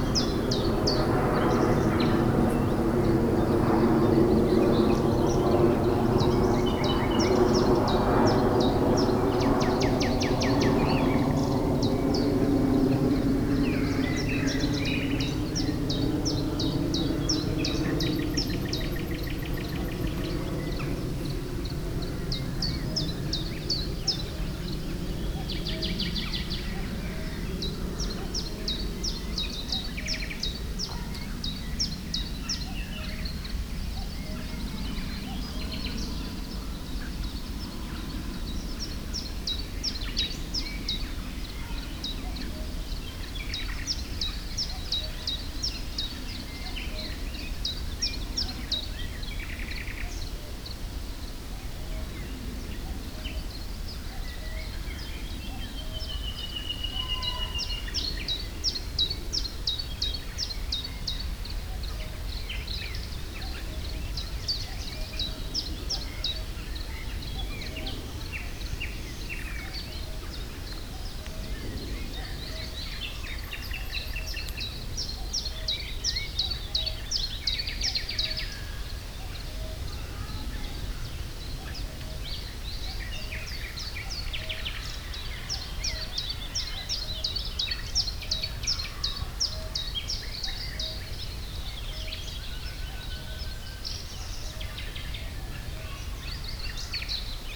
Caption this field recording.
Binaural recording. A lot of different birds (like the Cuckoo), planes, children playing, the almost white noise of leaves in the wind. Zoom H2 recorder with SP-TFB-2 binaural microphones.